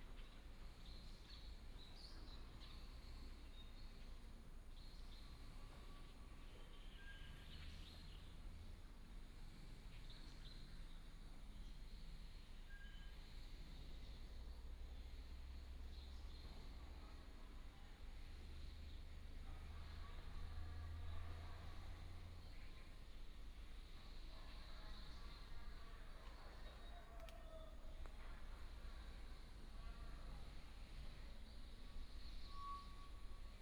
Anshuo Rd., Daren Township, Taitung County - in the morning
in the morning, Various bird tweets, traffic sound, Broadcast message sound, Chicken roar, Beside the school
Binaural recordings, Sony PCM D100+ Soundman OKM II